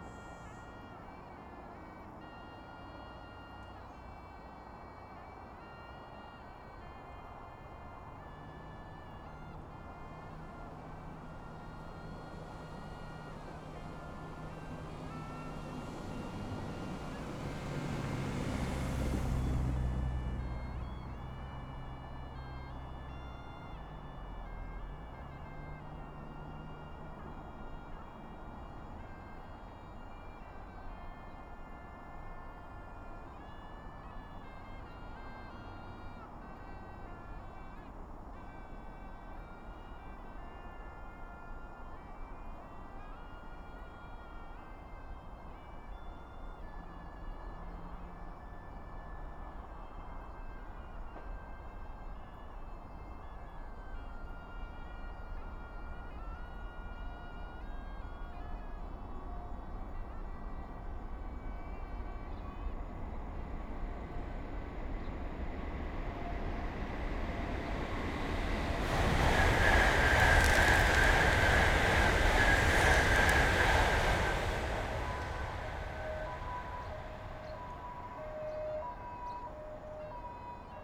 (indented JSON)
{
  "title": "Hukou Township, Hsinchu County - high-speed railroads",
  "date": "2017-08-12 17:34:00",
  "description": "Near high-speed railroads, traffic sound, birds sound, Suona, Zoom H6XY",
  "latitude": "24.88",
  "longitude": "121.07",
  "altitude": "146",
  "timezone": "Asia/Taipei"
}